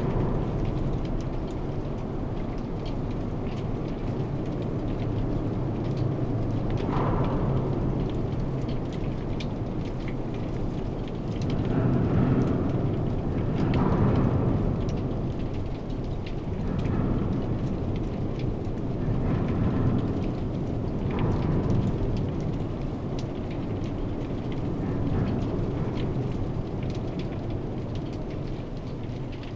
Bridge, Elsloo, Netherlands - Bridge over the Maas, Elsloo
M/S microphones and two hydrophones in the river. The rattling sound is from stones in the river.
Limburg, Nederland, 26 January